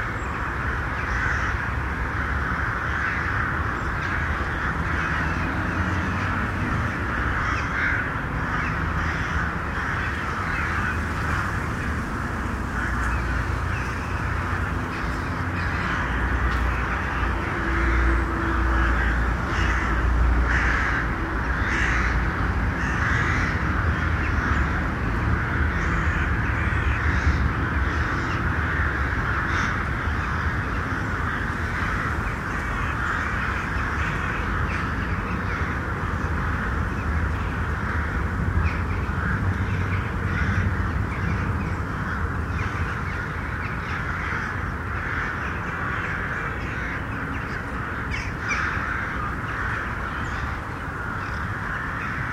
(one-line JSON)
{"date": "2009-01-17 23:16:00", "description": "jackdaws and crows over Dresden Germany", "latitude": "51.05", "longitude": "13.74", "altitude": "118", "timezone": "Europe/Berlin"}